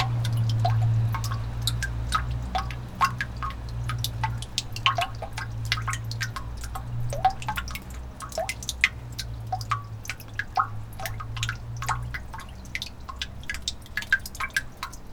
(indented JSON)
{
  "title": "near Gic, maribor - rainy summer, drops",
  "date": "2014-07-26 23:27:00",
  "latitude": "46.56",
  "longitude": "15.65",
  "altitude": "281",
  "timezone": "Europe/Ljubljana"
}